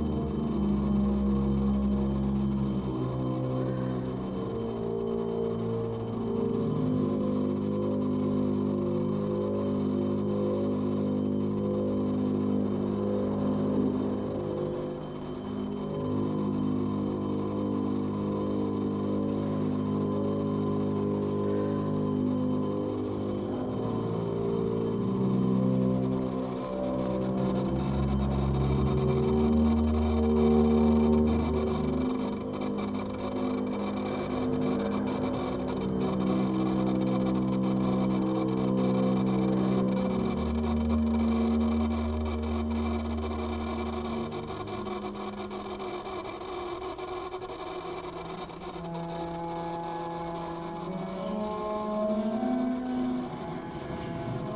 {"title": "Strada Izvor, București, Romania - exhibtion in the center for contemporary art", "date": "2017-09-26 16:48:00", "description": "Ambience inside the exhibition with Gary Hills and Popilotti Rist pieces", "latitude": "44.43", "longitude": "26.09", "altitude": "83", "timezone": "Europe/Bucharest"}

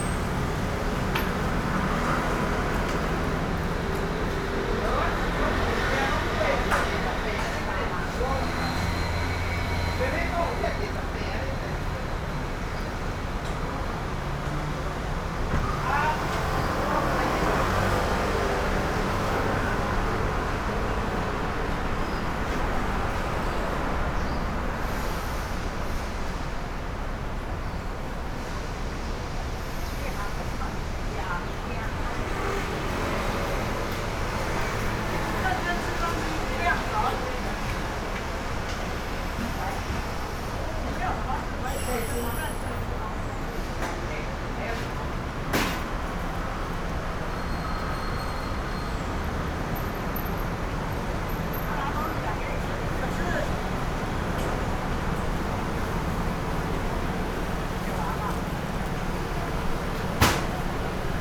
{"title": "Cianjin District, Kaohsiung - In the restaurant", "date": "2012-04-05 16:27:00", "description": "Restaurant staff conversations sound, Traffic Noise, Sony PCM D50", "latitude": "22.63", "longitude": "120.29", "altitude": "13", "timezone": "Asia/Taipei"}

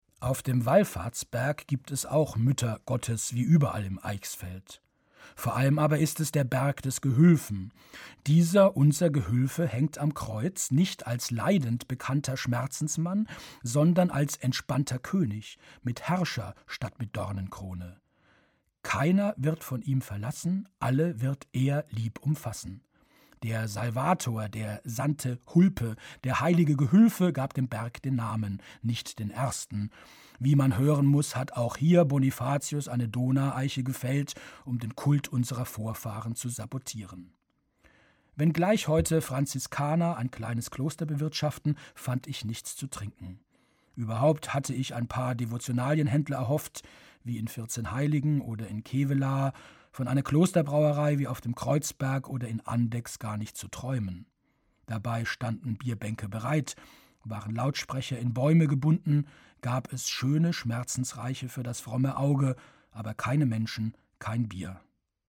{"title": "auf dem huelfensberg", "date": "2009-08-08 22:53:00", "description": "Produktion: Deutschlandradio Kultur/Norddeutscher Rundfunk 2009", "latitude": "51.22", "longitude": "10.16", "altitude": "439", "timezone": "Europe/Berlin"}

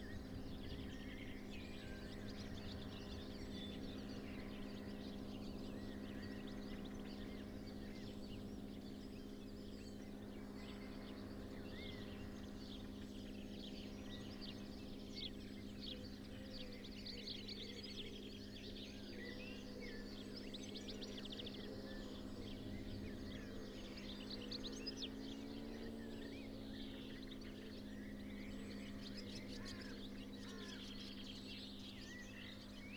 {"title": "Malton, UK - autogyro ...", "date": "2021-06-06 05:55:00", "description": "autogyro ... dpa 4060s in parabolic to mixpre3 ... bird calls ... song ... from ... wren ... chaffinch ... blackbird ... tree sparrow ... song thrush ... linnet ... blackcap ...", "latitude": "54.12", "longitude": "-0.54", "altitude": "79", "timezone": "Europe/London"}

